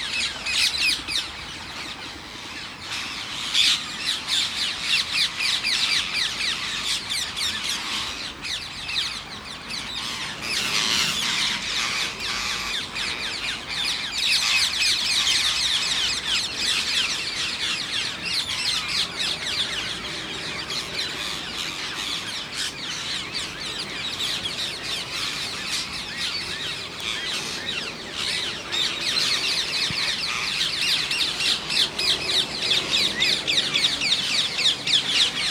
Garden of Remembrance, London Borough of Lewisham, London, UK - Ring-necked Paraket roost very close
This is slightly later at the thousand strong parakeet roost when it is beginning to quieten as night falls. It's easier to hear individual birds some of which are only a few meters away.